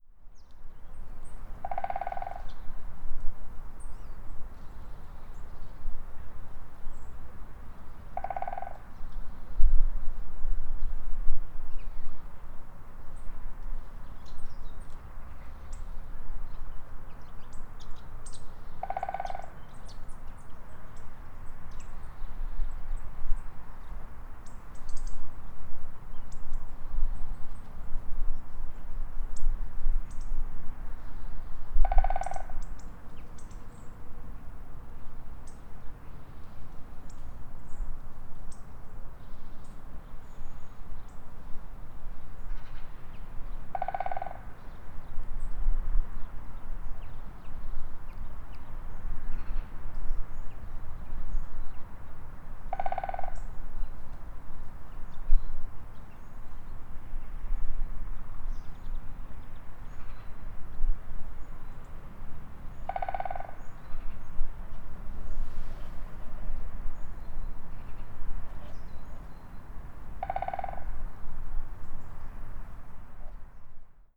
Via Villa Patt, Sedico BL, Italia - rhythmic concert
Woodpecker’s rhythmic concert in a wood (Villa Patt di Sedico, Belluno, Italy)